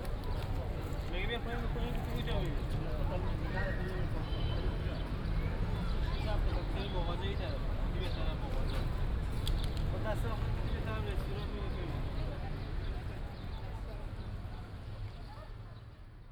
Victoria square, Athen - walking on the square
walking over Victoria square. The place is quite populated, mainly by refugees, who used to have their tents here recently. Passing a corner where food is distributed to people, and kids are sitting around drawing pictures on paper.
(Sony PCM D50, OKM2)
Athina, Greece, 7 April